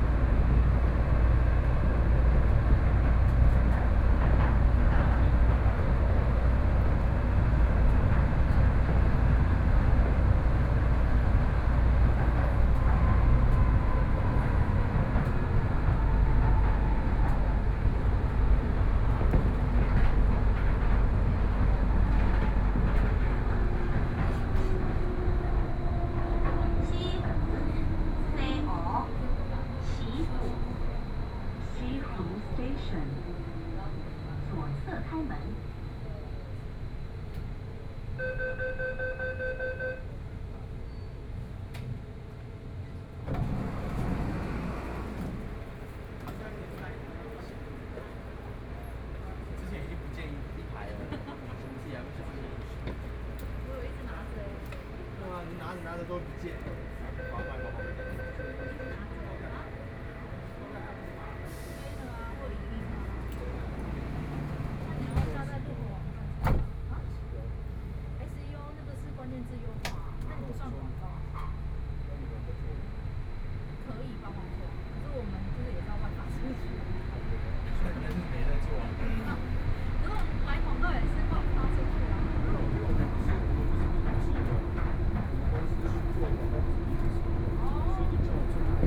內湖區, Taipei City - Neihu Line (Taipei Metro)
from Gangqian Station to Dazhi Station
Binaural recordings